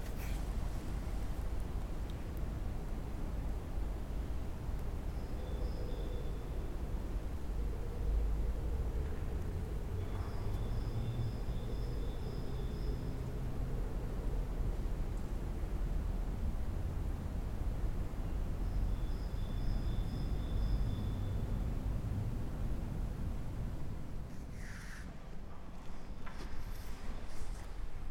Kostel Sv. Jana Na Skalce - Inside the Church and the garden
Kostel sv. Jana Nepomuckého Na Skalce byl kdysi založen jako kaplička na původní vinici Skalka. V roce 1691 ji založil na dolním konci Karlova náměstí mniši z nedalekého kláštera Na Slovanech. V roce 1706 bylo při kapli ustanoveno bratrstvo pod ochranou Panny Marie ke cti Jana Nepomuckého, svatořečeného v roce 1729.